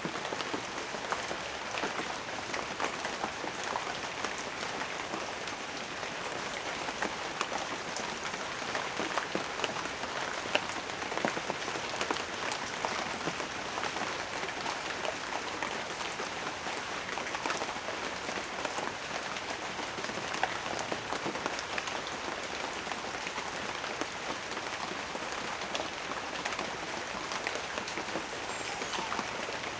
Telaya, Veracruz, Mexico - Light Rain
Light rain in a field of bananas trees
AB setup by 2 B&k 4006
Veracruz de Ignacio de la Llave, México